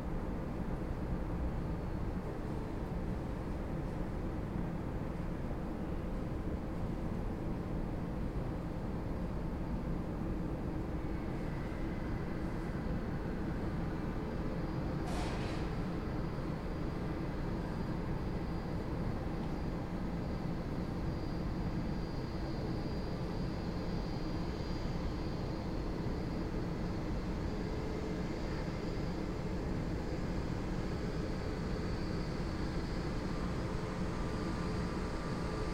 Lisbon, Portugal - Nearly empty terminal
Early morning at a nearly empty part of the terminal. From outside we can listen to luggage carts and one aeroplane taxing to gate. TASCAM DR-40X on AB.
14 December 2021, 07:00